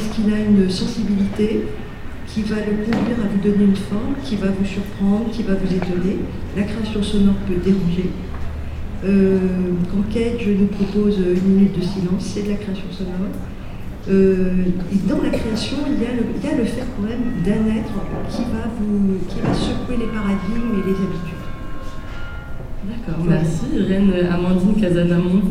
Rue Bellegarde, Toulouse, France - RadioPhonie
RadioPhonie est le premier festival d’Occitanie dédié au média radio, à la création sonore et au podcast. Au cours de ces trois jours, le Centre culturel Bellegarde accueille séances d’écoutes, performances live et tablerondes avec l’envie de créer du lien entre professionnels, auteurs et auditeurs. Un événement convivial qui fait cohabiter une programmation locale et internationale tout en proposant une sélection à destination du jeune public. Chaque journée se termine par une session musicale animée par les DJs de Campus FM. Ce festival s’inscrit dans la continuité des évènements mensuels organisés en partenariat entre le Centre culturel Bellegarde et Campus FM.
Captation : ZoomH6